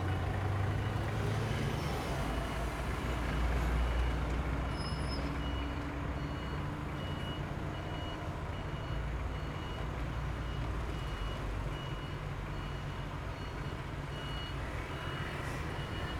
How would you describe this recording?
Next to the railway crossing, The train passes by, Traffic sound, Zoom H2n MS+XY